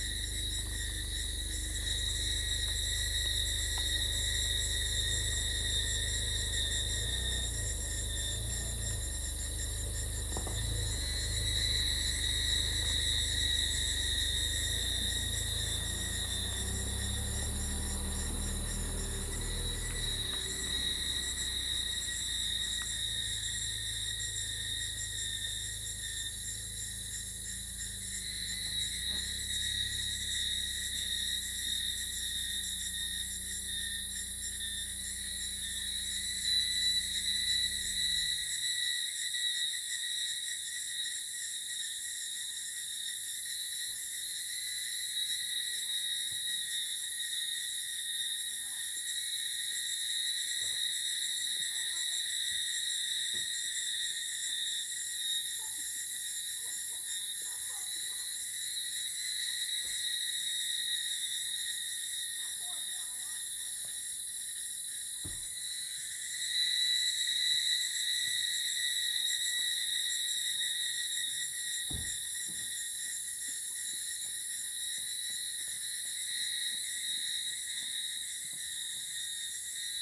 金龍山曙光, Yuchi Township - In the woods
In the woods, Bird sounds, Cicada sounds
Zoom H2n MS+XY
18 May 2016, ~05:00